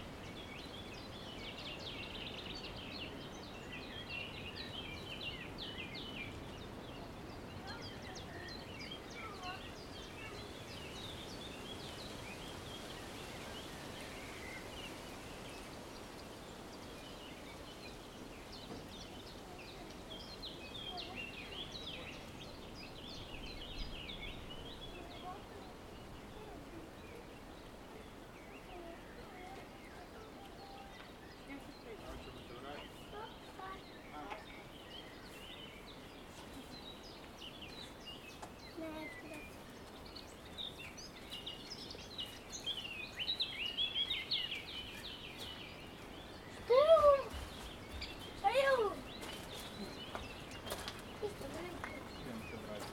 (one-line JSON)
{"title": "Halasz Csarda - Birds near the river", "date": "2021-04-05 14:20:00", "description": "Birds singing in the forest near the river Drava. Cyclist and people with a small child passing by on the trail between the forest and the river. Recorded with Zoom H2n (XY, gain on 10, on a small tripod) placed on a wooden ornithological observatory.", "latitude": "46.30", "longitude": "16.87", "altitude": "130", "timezone": "Europe/Zagreb"}